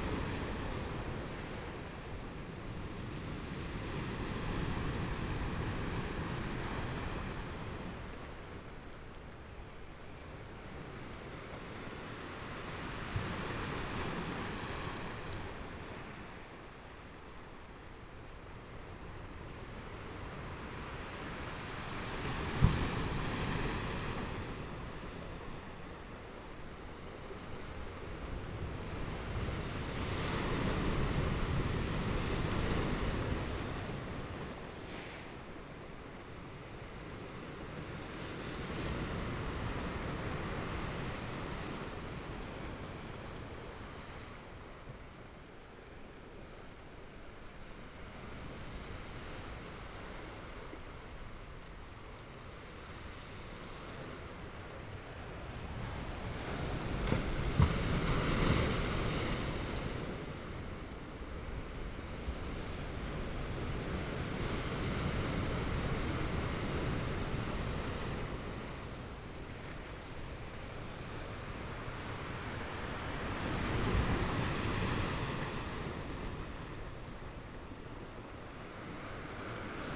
Sound of the waves
Binaural recordings
Zoom H4n+ Soundman OKM II + Rode NT4